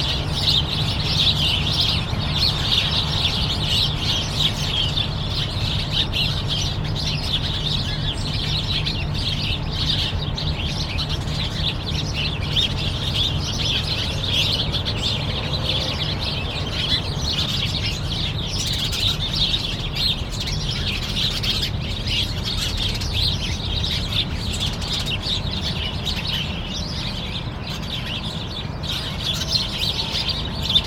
La Bouille, France - Sparrows
Into this tree, sparrows are fighting on the early morning, because these birds feel so good being fighting waking up !